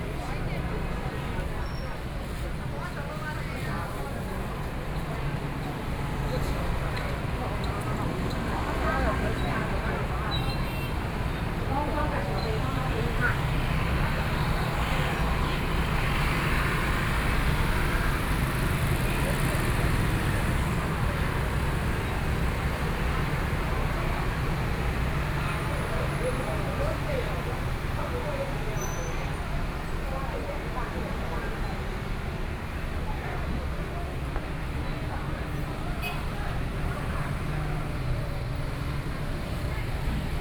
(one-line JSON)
{"title": "Shengping St.Yilan City - Walking in traditional markets", "date": "2014-07-05 09:07:00", "description": "Walking in traditional markets, Traffic Sound, Hot weather\nSony PCM D50+ Soundman OKM II", "latitude": "24.75", "longitude": "121.75", "altitude": "17", "timezone": "Asia/Taipei"}